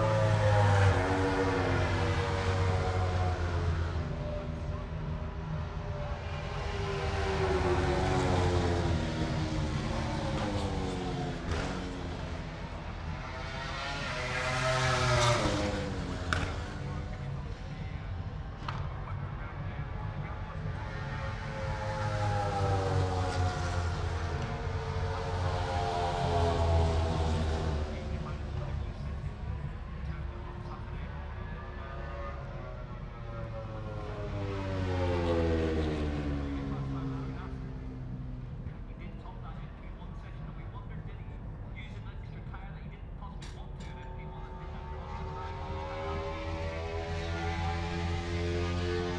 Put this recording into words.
british motorcycle grand prix 2022 ... moto grand prix free practice two ... inside maggotts ... dpa 4060s clipped to bag to zoom h5 ...